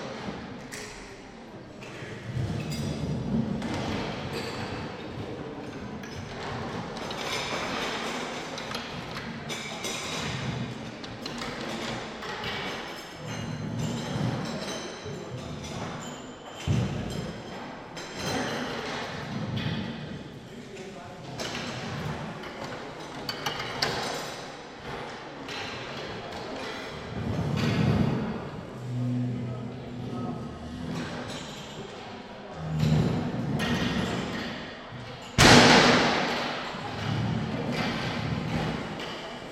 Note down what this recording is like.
wed 06.08.2008, 17:00, after funeral feast in public hall, men moving chairs and tables back to the store